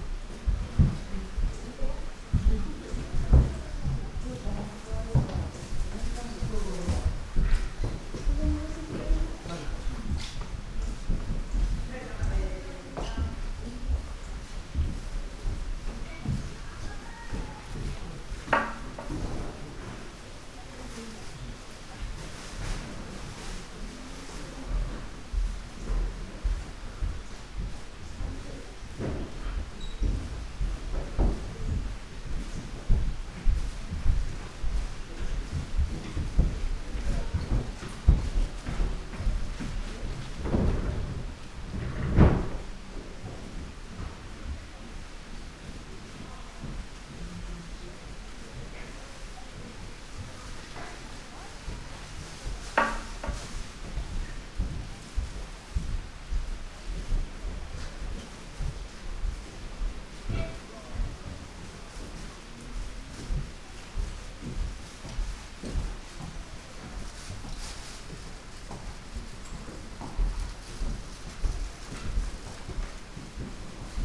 corridors, Nanzenji zen garden, Kyoto - dim lights, windows wide open
gardens sonority
wooden floor, steps, murmur of people, bamboo tube ... and distorted microphones connection
Kyōto-fu, Japan